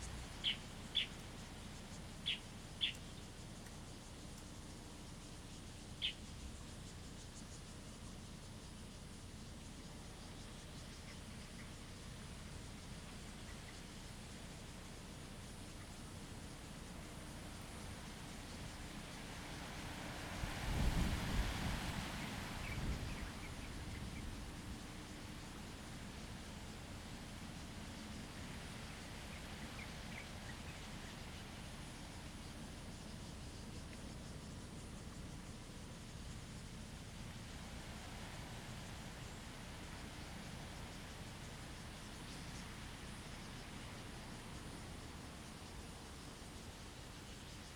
金龍湖, Dawu Township - At the lake
At the lake, traffic sound, The weather is very hot, The sound of the wind and leaves
Zoom H2n MS +XY